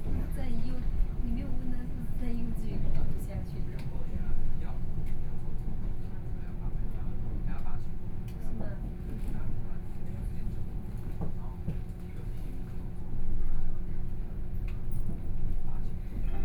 Pingzhen, Taoyuan County - Local Express
from Puxin Station to Zhongli Station, Sony PCM D50 + Soundman OKM II